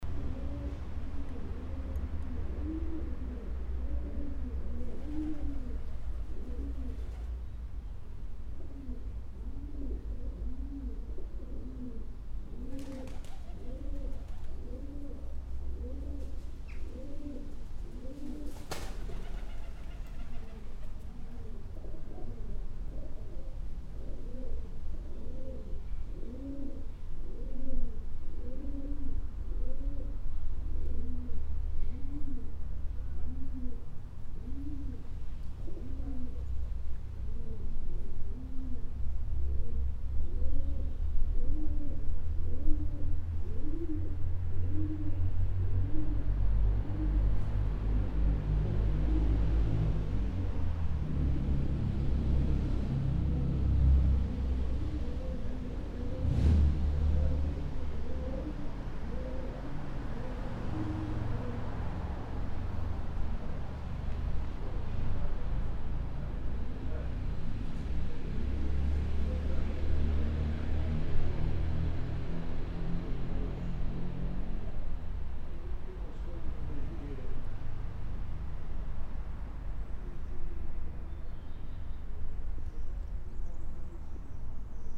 Perugia, Italy - pigeons
pigeons, street ambience, traffic